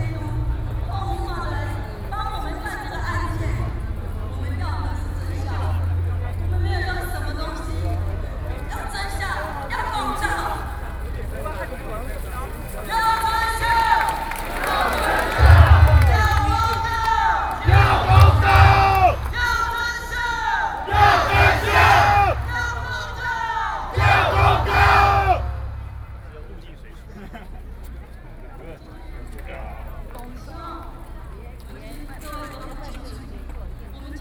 Protest against the government, A noncommissioned officer's death, More than 200,000 people live events, Sony PCM D50 + Soundman OKM II